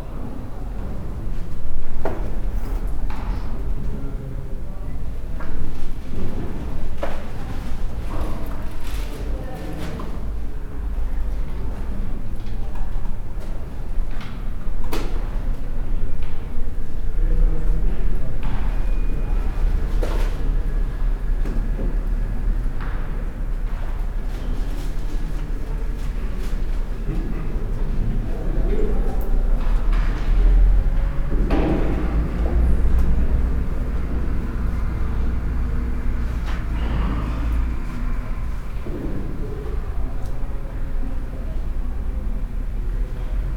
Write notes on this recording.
hum of the building with its open staircase; steps and voices over 3 floors; bus station roaring outside; the recordings were made in the context of the podcast project with Yes Afrika Women Forum